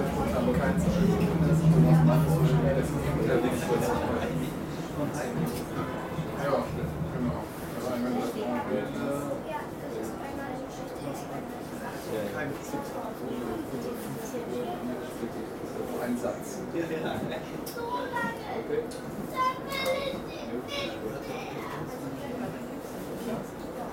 cologne, tram - Köln, tram
Tram ride from station Eifelstrasse to station Rudolfplatz. Tram changes to subway after 2 station.
recorded july 4th, 2008.
project: "hasenbrot - a private sound diary"
Cologne, Germany